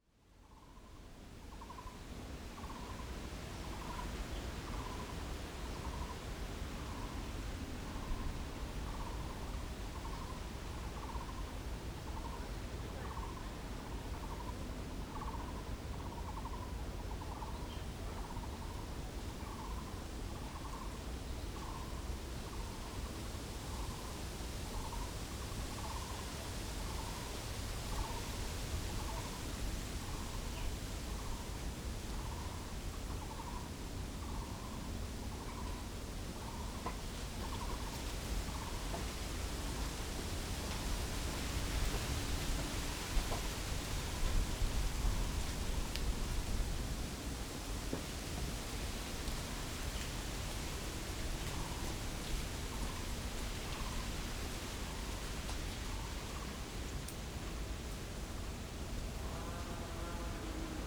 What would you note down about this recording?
Afternoon in the mountains, Rode NT4+Zoom H4n